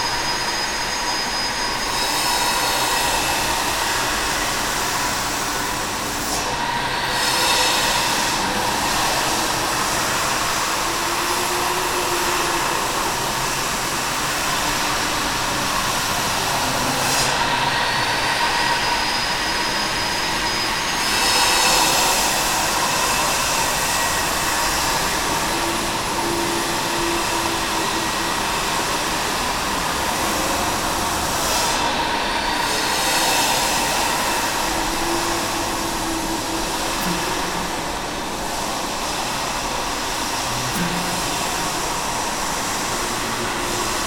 construction noise inside the dom cathedrale in the morning time
soundmap nrw - social ambiences and topographic field recordings